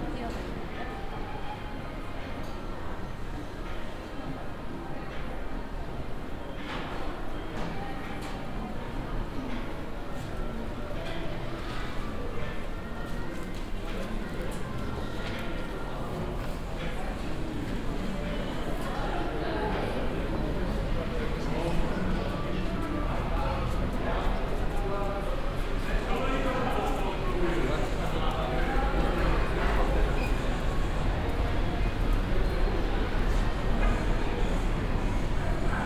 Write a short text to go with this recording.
inside the shopping mall opernpassagen, soundmap nrw - social ambiences and topographic field recordings